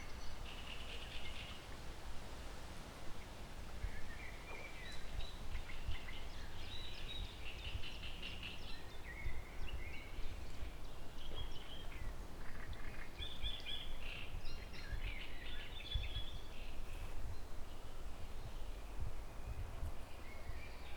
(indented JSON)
{
  "title": "Tuczno near Poznan, Steszewskie Lake",
  "date": "2010-06-03 16:49:00",
  "description": "standing at the shore, very close to water, picking up lake and forest ambience",
  "latitude": "52.52",
  "longitude": "17.15",
  "altitude": "99",
  "timezone": "Europe/Warsaw"
}